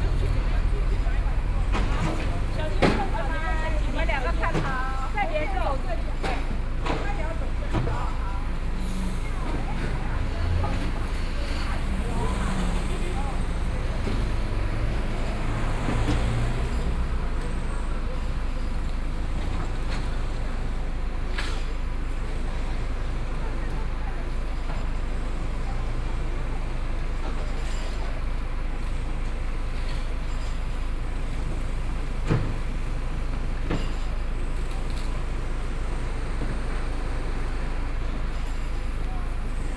106台灣台北市大安區大學里 - Intersection
Construction noise, Demolition waste transporting bricks, The crowd on the road with the vehicle, Binaural recordings, Sony PCM D50 + Soundman OKM II
Daan District, Taipei City, Taiwan